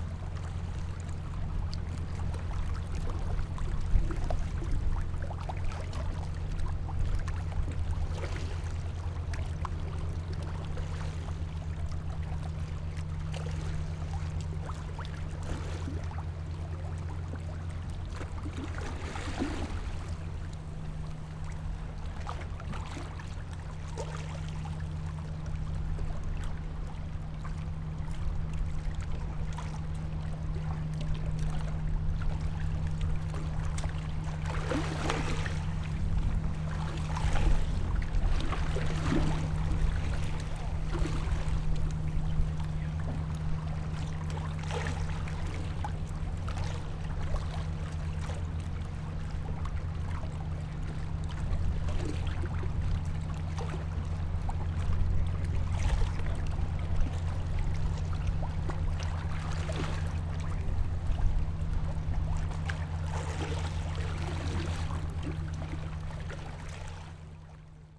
赤崁遊客碼頭, Baisha Township - Small pier
Tide, Quayside, Small pier
Zoom H6 + Rode NT4